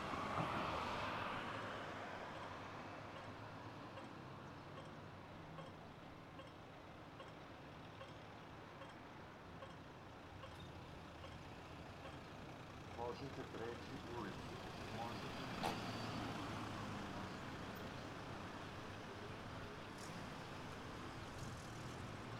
Ul. Ive Lole Ribara, Rijeka, Croatia - New Audible Traffic Sign
Audible traffic sign with voice announcement